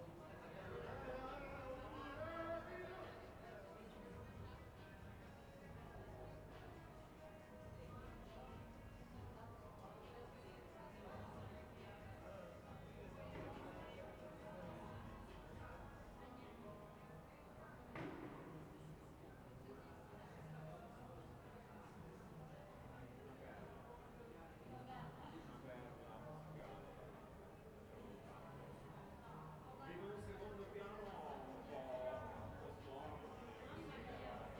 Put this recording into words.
"Round Midnight April Friday with pipes sounds in the time of COVID19": soundscape. Chapter CLXVIII of Ascolto il tuo cuore, città. I listen to your heart, city, Friday, April 16th, 2021. Fixed position on an internal terrace at San Salvario district Turin, at the end I play some plastic and metal pipes (for electrical installation). One year and thirty-seven days after emergency disposition due to the epidemic of COVID19. Start at 11:58 p.m. end at 00:15 a.m. duration of recording 16’48”